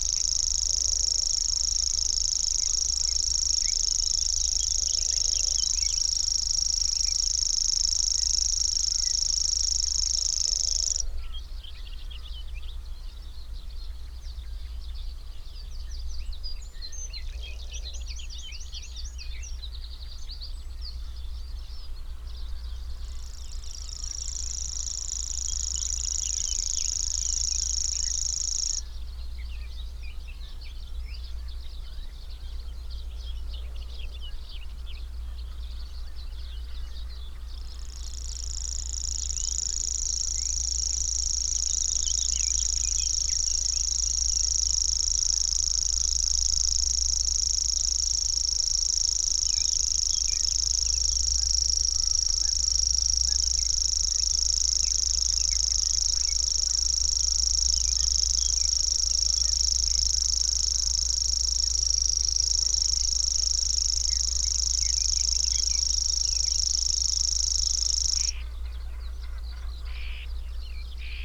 Cliff Ln, Bridlington, UK - grasshopper warbler ... in gannet territory ...
Grasshopper warbler ... in gannet territory ... mics in a SASS ... bird calls ... song from ... blackcap ... whitethroat ... pied wagtail ... gannet ... kittiwake ... tree sparrow ... wren ... song thrush ... wood pigeon ... jackdaw ... some background noise ...
27 June 2018